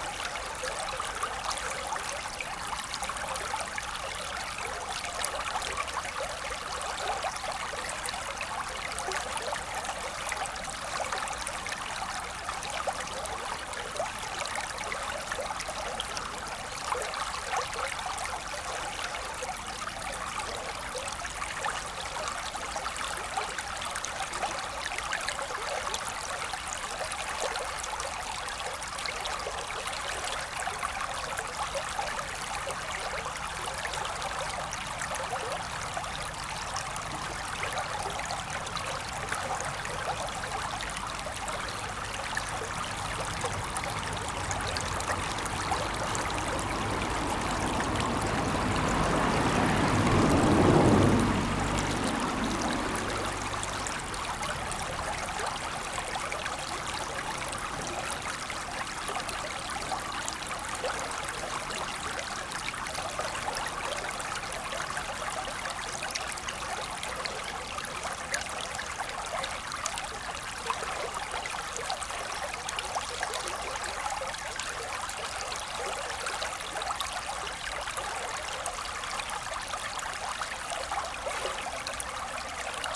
Villiers-le-Morhier, France - Drouette river
The Drouette river flowing quietly during a beautiful cold winter day.